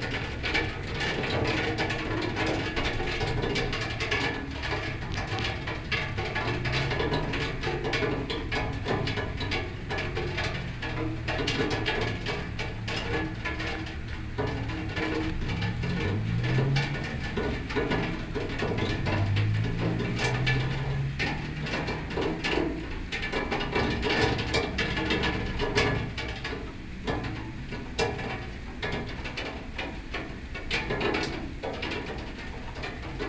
rain cascading off splash-boards at the back of third floor flat, recorded from open window